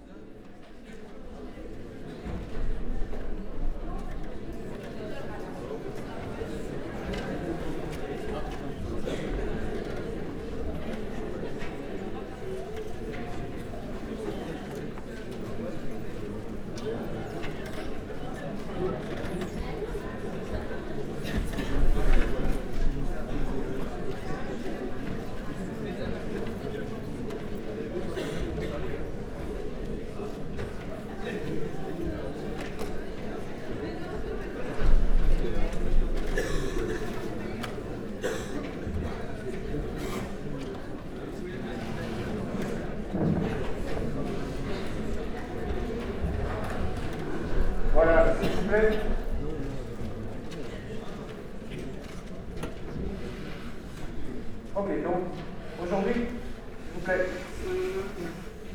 Centre, Ottignies-Louvain-la-Neuve, Belgique - A course of biology
In the very very very huge Socrate auditoire, a course of Biology.